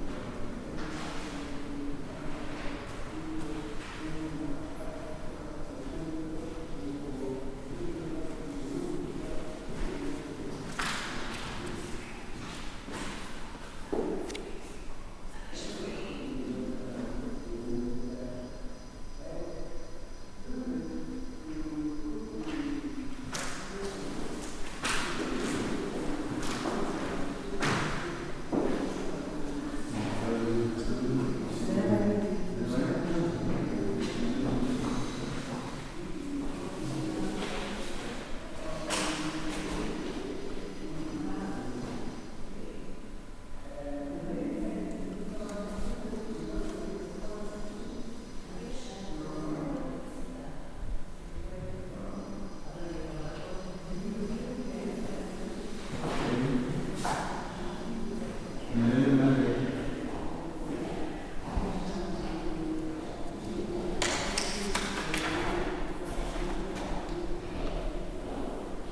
Tsadok ha-Cohen St, Tel Aviv-Yafo, Israel - CCA, Tel Aviv
CCA, contemporary center of art. Tel Aviv.
22 March 2019, 12:00